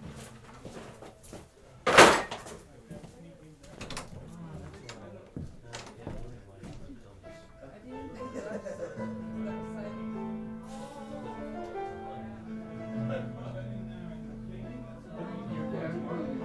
Tallinn, Koidu - corridor
sounds in the corridor